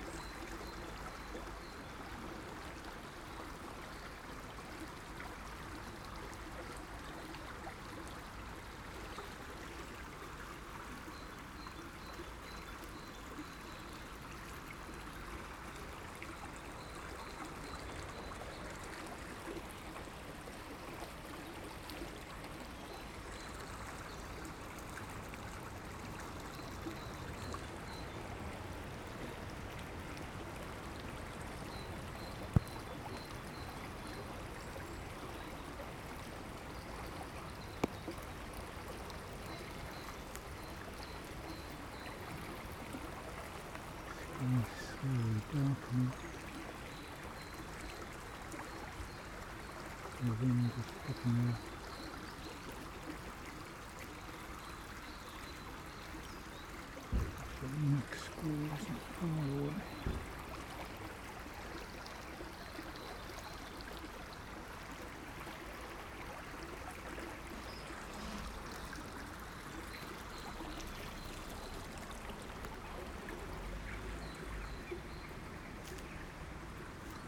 The Drive Moorfield Lodore Road
In the Little Dene
down by the stream
which is very full after the recent storm
The grid at the entrance to the culvert is clogged
the water drops about a foot
through the trapped accumulated vegetation and detritus
A tree has been taken down
and cut into trunk size roundels
North East England, England, United Kingdom